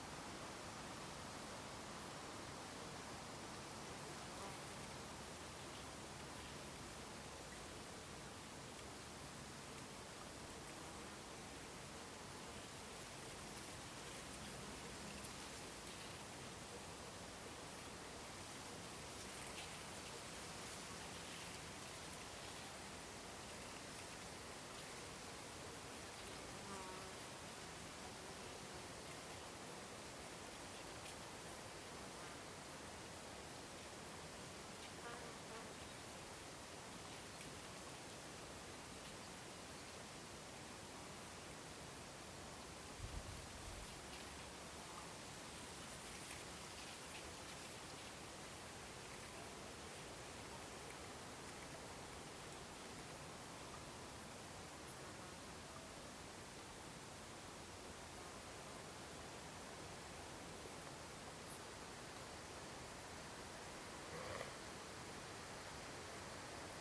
lake rängen near stafsäter, summer day.
stafsäter recordings.
recorded july, 2008.
Sturefors, Sweden